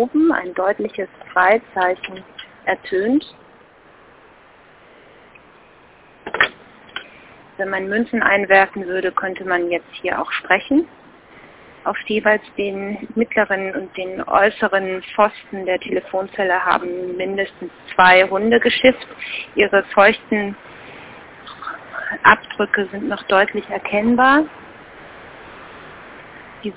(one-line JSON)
{"title": "Fernsprecher Hasenheide 58 - Störung behoben 08.08.2007 14:45:37", "latitude": "52.49", "longitude": "13.41", "altitude": "41", "timezone": "GMT+1"}